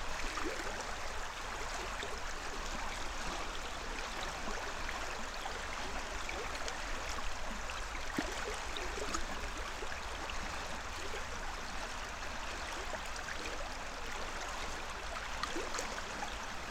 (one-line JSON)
{"title": "Vilnius, Lithuania, river Vilnia", "date": "2019-10-19 13:40:00", "description": "outside te borders of the town, at the river", "latitude": "54.69", "longitude": "25.35", "altitude": "140", "timezone": "Europe/Vilnius"}